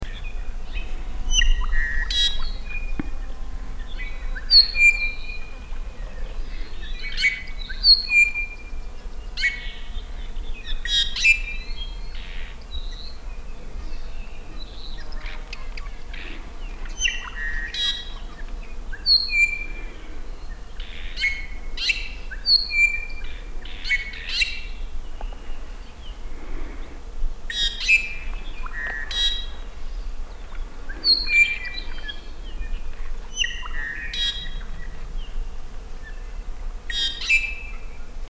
Hosmer Grove, Haleakala NP, Maui
Iiwi (endemic bird of Hawaii) singing
HI, USA, 2 December 2011, 10:56